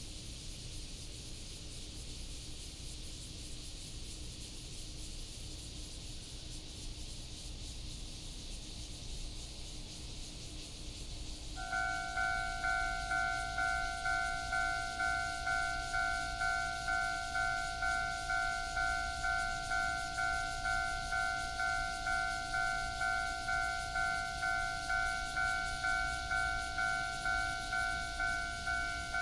忠義里, Zhongli Dist., Taoyuan City - in the Railroad Crossing
Factory sound, Cicada cry, Traffic sound, The train runs through, Railroad Crossing